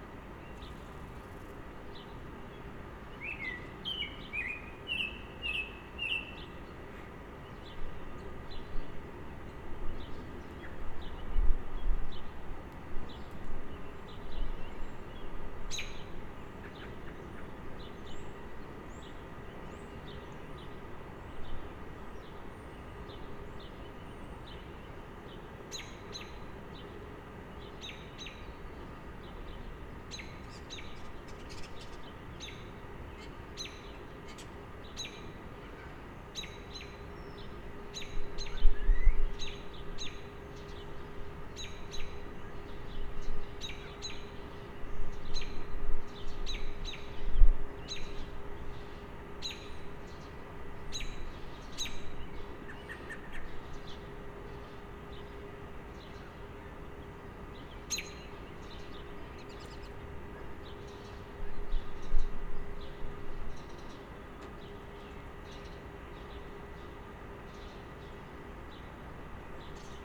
The microphone is a Sennheiser mono shotgun mic, positioned in the window of a third floor attic of a house in Peterborough, Ontario, Canada. Peterborough is a small city located between Toronto and Ottawa with a long history of working class manufacturing jobs, and more recently the city has been strongly influenced by two post-secondary institutions. The neighbourhood where the microphone is positioned is just adjacent to downtown Peterborough and is known as The Avenues. It was initially built as a suburb to house the workers employed at the General Electric manufacturing facility. The facility is now a nuclear processing plant, and the neighbourhood has evolved to house a mix of tenants and homeowners – from students renting homes to the middle and working classes.
This microphone picks up lots of sounds of residential life – the sounds of heating exhaust from houses, cars and trucks coming and going, and people going about their day.
Ontario, Canada, 25 March 2020